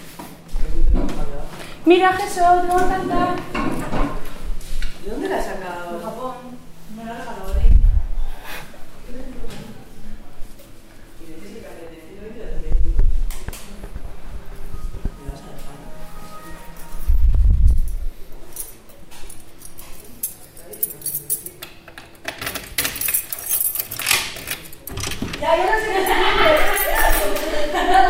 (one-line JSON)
{"title": "leioa, EHU basque country - audio ehu jesso ale irene", "date": "2009-11-27 11:18:00", "description": "people talking audiovisual section fine arts faculty basque country university", "latitude": "43.33", "longitude": "-2.97", "altitude": "80", "timezone": "Europe/Berlin"}